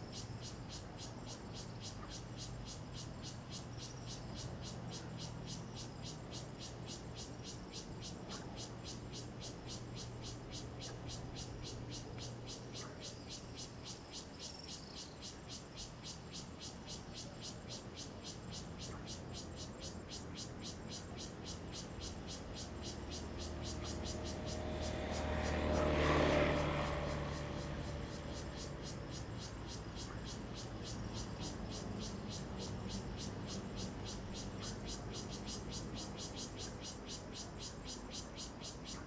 都蘭村, Donghe Township - Cicadas and Frogs
Cicadas sound, Frogs sound, Traffic Sound
Zoom H2n MS+ XY